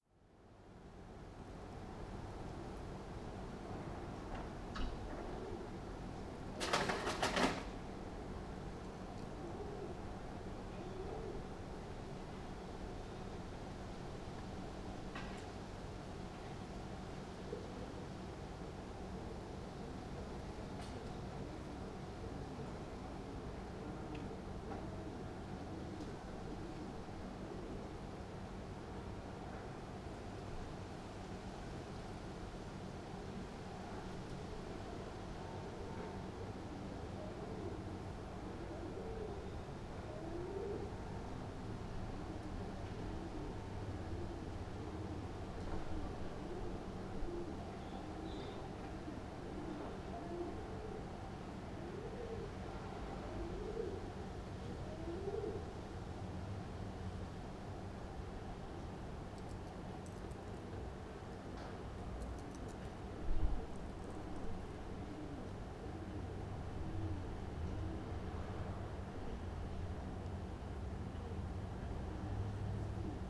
from/behind window, Mladinska, Maribor, Slovenia - hot quietness
summer afternoon with 40˚, doves, dry leaves ...